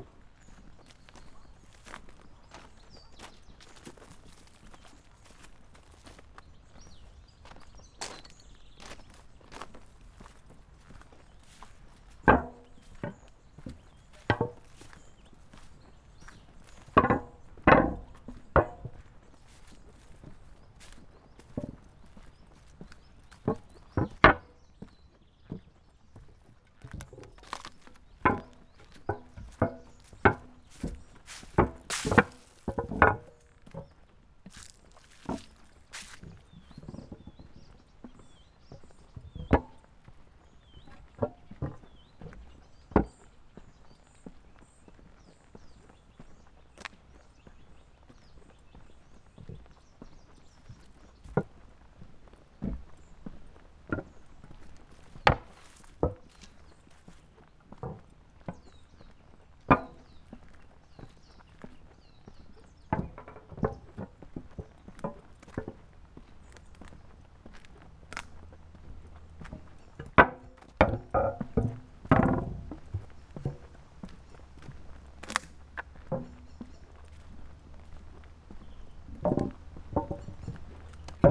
Tallinn, Kopli, Maleva, trackbed
tallinn, kopli, walk along an unused track, on concrete covers over manhole
Tallinn, Estonia